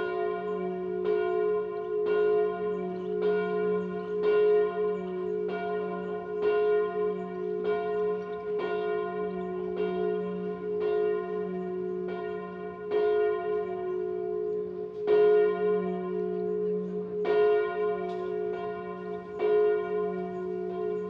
Avignon, France - Avignon Bell
Bell from the Cathedral.
Stereo mic, cassette recorder
5 August 1991, 14:00